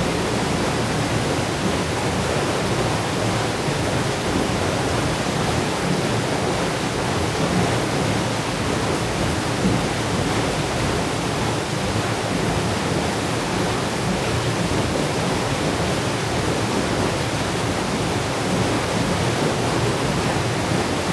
{"title": "enscherange, rackesmillen, mill wheel", "date": "2011-09-23 19:48:00", "description": "At the mill wheel. The sound as the stowed water floats into the mills wheel room and starts to move the wheel.\nEnscherange, Rackesmillen, Mühlenrad\nAm Mühlrad. Die Gräusche des gestauten Wassers wie es in die Mühle fliesst und das Mühlrad beginnt anzutreiben.", "latitude": "50.00", "longitude": "5.99", "altitude": "312", "timezone": "Europe/Luxembourg"}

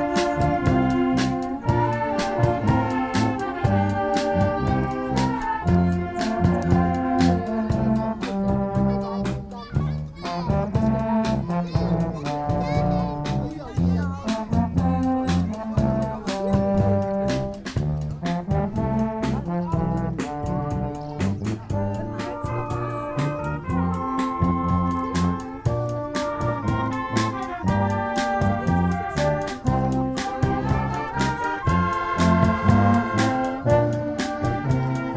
{"title": "rurberg, lake promenade, seasonal public ministry celebration", "date": "2010-06-28 12:47:00", "description": "at the seasonal public ministry celebration. a local brass orchestra performing and conversation of people\nsoundmap nrw - social ambiences and topographic field recordings", "latitude": "50.61", "longitude": "6.38", "altitude": "283", "timezone": "Europe/Berlin"}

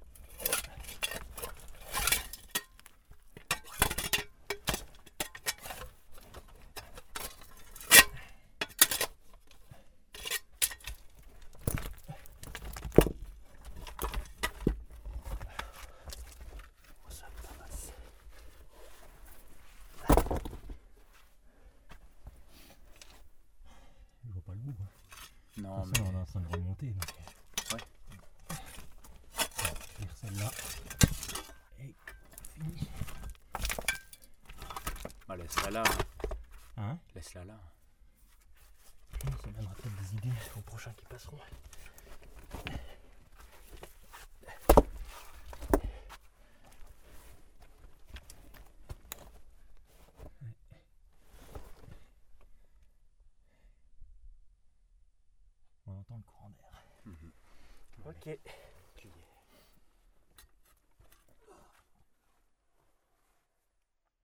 {"title": "Saint-Martin-le-Vinoux, France - Digging in a mine", "date": "2017-03-28 08:00:00", "description": "In an underground mine, we are digging in aim to open a collapsed tunnel.", "latitude": "45.23", "longitude": "5.73", "altitude": "768", "timezone": "Europe/Paris"}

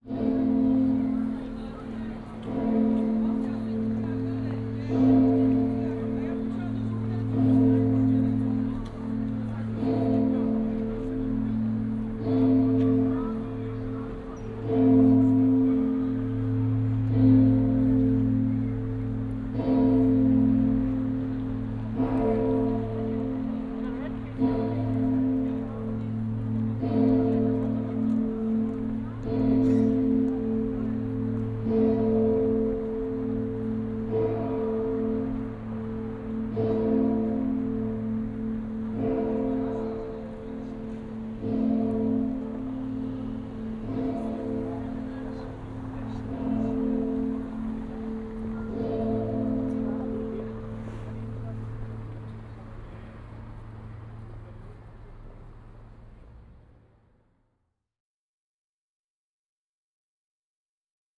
{"title": "Grabiszyńska, Wrocław, Polska - (64) Saint Elisabeth churchs bells", "date": "2016-12-03 18:25:00", "description": "Binaural recording of Saint Elisabeth Church's bells.\nrecorded with Soundman OKM + Sony D100\nsound posted by Katarzyna Trzeciak", "latitude": "51.10", "longitude": "17.01", "altitude": "123", "timezone": "Europe/Warsaw"}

